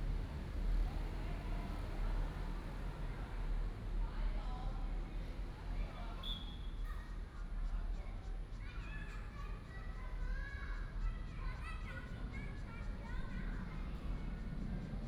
Sec., Beitou Rd., Beitou Dist., Taipei City - MRT train sounds
under the track, MRT train sounds
Please turn up the volume a little. Binaural recordings, Sony PCM D100+ Soundman OKM II
Taipei City, Taiwan, 30 July 2015